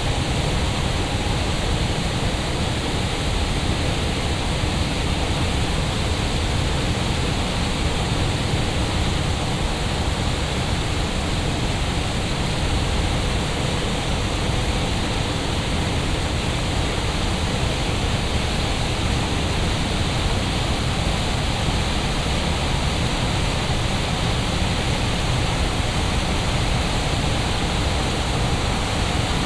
alexanderplatz, sa. 14.06.2008, 17:20
very intense exhaust-air plant, malodour of the restaurant 200m above
Berlin, Deutschland, 14 June 2008